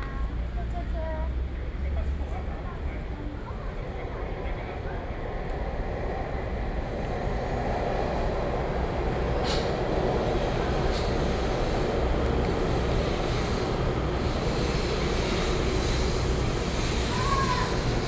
Train stops, Through trains, Sony PCM D50 + Soundman OKM II